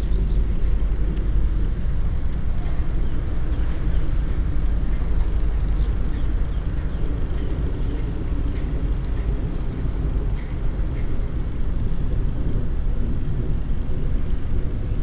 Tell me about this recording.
Binaural recording of a marine / industrial atmosphere. Recorded with Soundman OKM on Sony PCM D100